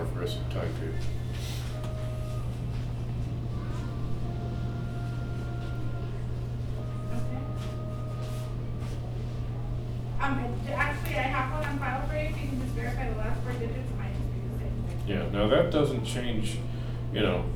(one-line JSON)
{"title": "neoscenes: Prescott Transit Authority office", "date": "2010-02-22 10:18:00", "latitude": "34.55", "longitude": "-112.46", "altitude": "1636", "timezone": "America/Phoenix"}